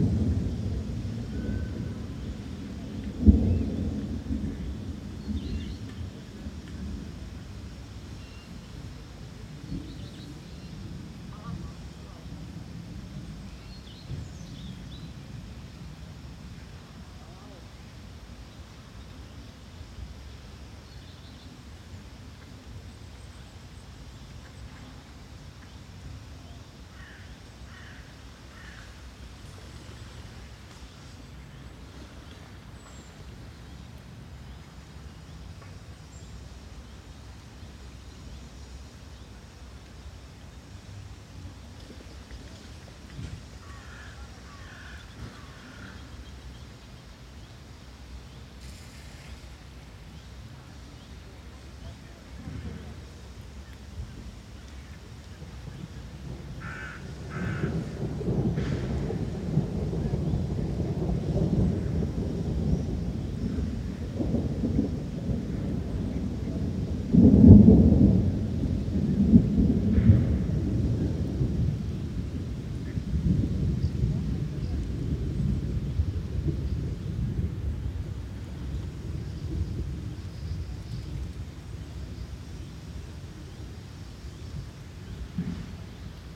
Boriso Nemcovo skveras, Žvėryno sen, Vilnius, Lietuva - Before thunderstorm
The two ponds are separated by a bridge and there are several trees nearby. The ponds are surrounded by residential houses on all sides, a street on one side and a meadow on the other. The meadow is covered with individual deciduous trees.
At the time of recording it was raining lightly, with light winds, thundering at intervals of ~1-1'30min.
Waterfowl with chicks - pochards, mallards, crows, pigeons. Occasional sounds of passing cars, people talking could be heard.
Vilniaus miesto savivaldybė, Vilniaus apskritis, Lietuva, 11 June 2021, 14:00